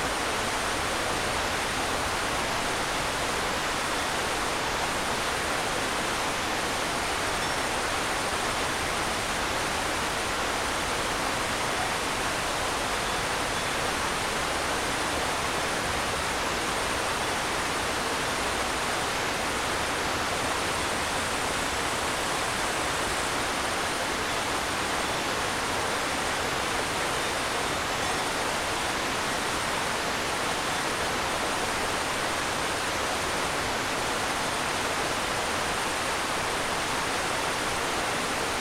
Près de la cabane Le Dahu, le bruit du torrent domine, quelques stridulations de sauterelles et criquets émergent ainsi que le tintement aléatoire de cloches de vaches.